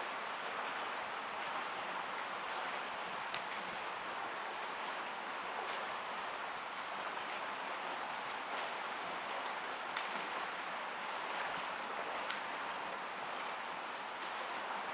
Unnamed Road, Ramat Rachel - Swimming pool
Swimmers at swimming pool
מחוז ירושלים, ישראל